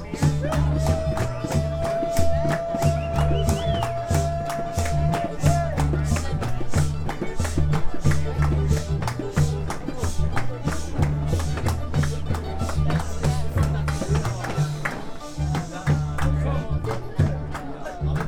Santubong Resort, Borneo - Nocturnal Jam
meet the musicians at the nocturnal poolside jam session, Rainforest World Music Festival 2007